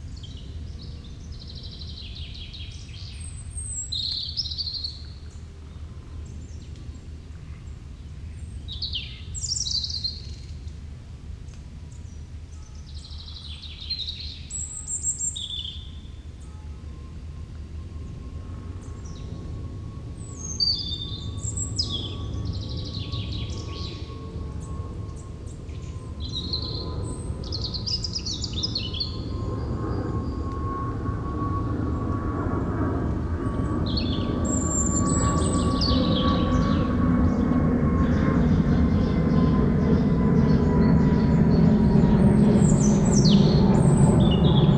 Morning sounds in Lagoni di Mercurago natural park.
Italy, Arona. Nature park. Robin singing, airplane flying over, distant churchbell.
NO, PIE, Italia